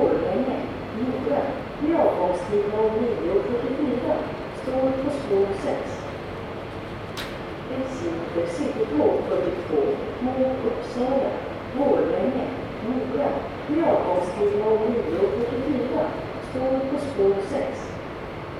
Stockholm Cityterminalen, Sweden - Stockholm main station
Main train station. People walking with luggage. Traffic announcement.
Recorded with Zoom H2n, 2CH, deadcat, handheld.
21 February 2019, 09:39